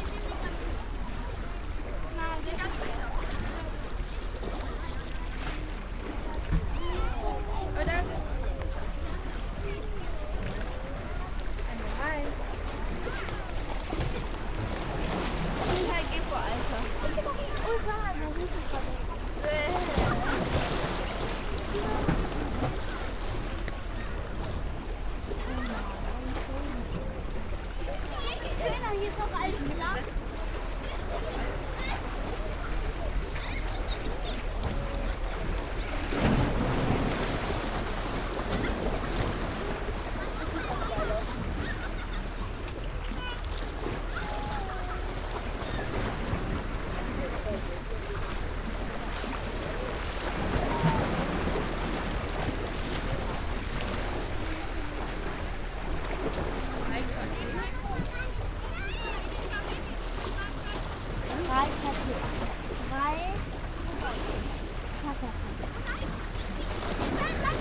german speaking young ladies - try to catch photo with RA